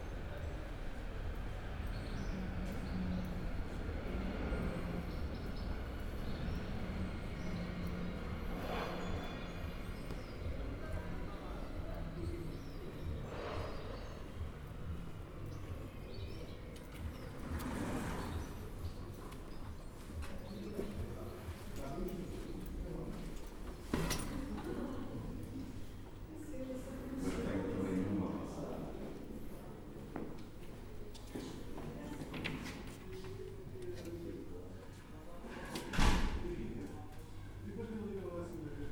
Mafamude, Portugal - Soundwalk, RTP
Soundwalk in RTP, Porto.
Zoom H4n and Zoom H2
Carlo Patrão & Miguel Picciochi
Vila Nova de Gaia, Portugal, 6 December, 13:30